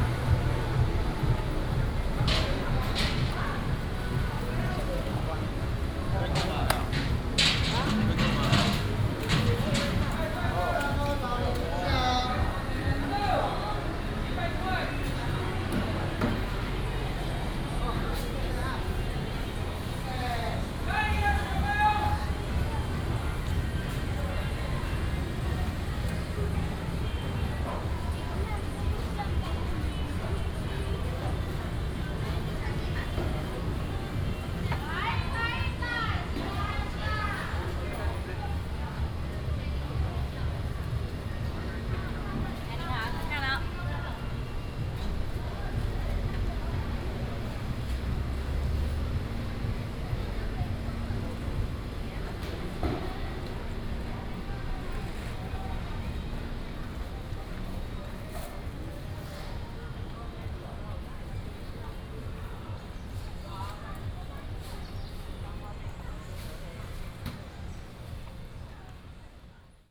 中福黃昏市場, Zhongli Dist. - Evening market
walking in the Evening market, traffic sound
Taoyuan City, Taiwan, August 2017